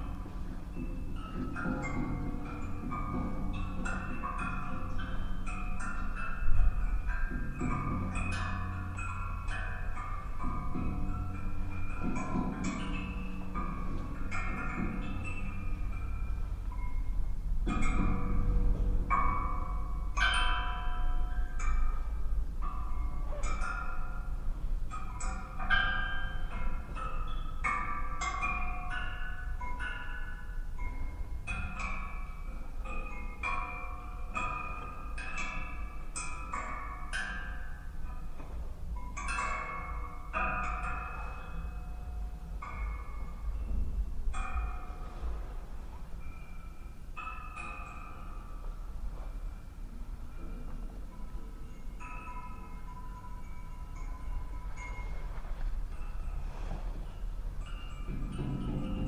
coat rack, ambient, xylophone-like sounds
Tallinn, Estonia, 20 April